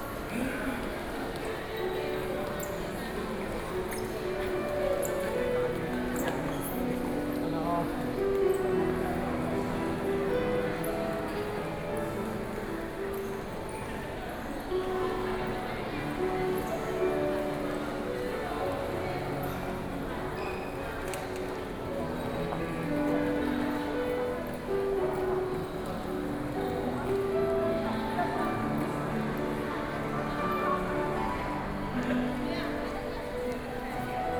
台灣台北市台大醫學院 - in the hospital
In the hospital, Piano Performance, (Sound and Taiwan -Taiwan SoundMap project/SoundMap20121129-2), Binaural recordings, Sony PCM D50 + Soundman OKM II
29 November 2012, 12:05